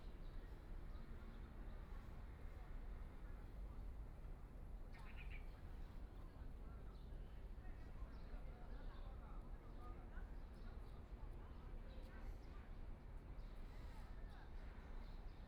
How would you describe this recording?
Sitting in the park's entrance, Nearby residents into and out of the park, Binaural recording, Zoom H6+ Soundman OKM II